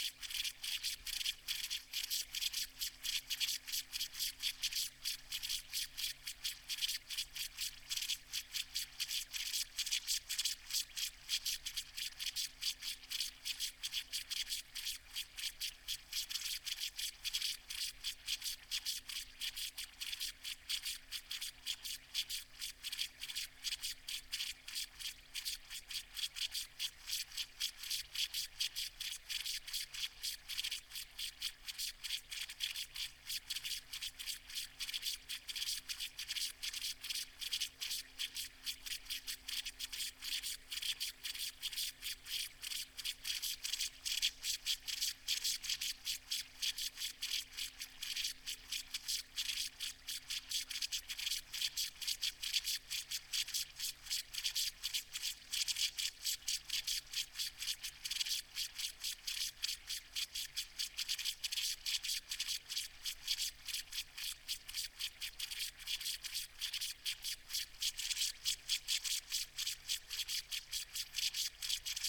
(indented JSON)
{
  "title": "Malton, UK - leaking pipe ...",
  "date": "2022-07-22 06:09:00",
  "description": "leaking pipe ... part of an irrigation system ... dpa 4060s in parabolic to mixpre3 ...",
  "latitude": "54.13",
  "longitude": "-0.56",
  "altitude": "101",
  "timezone": "Europe/London"
}